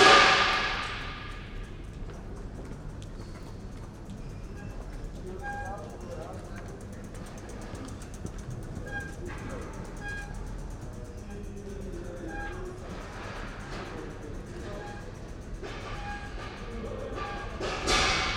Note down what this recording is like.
in a quiet courtyard behind maribor's main square, workers install platforms and seating inside a large tent